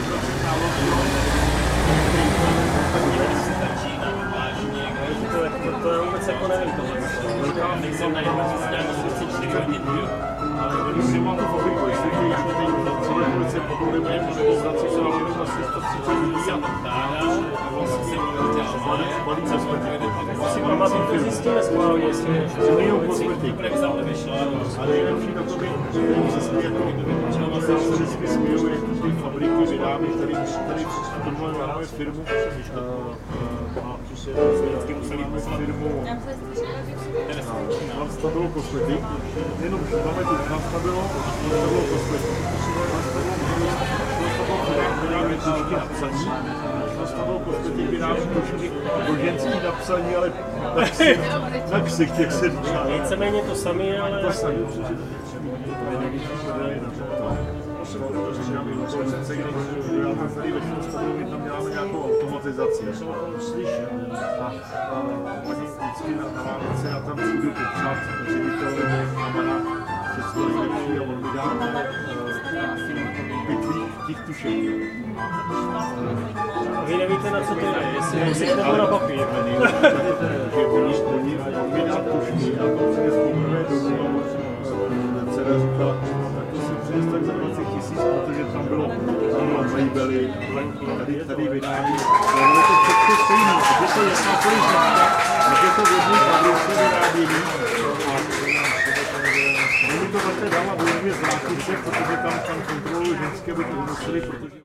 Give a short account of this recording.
Hospada Na Louži, Kájovská 66, 38101 Český Krumlov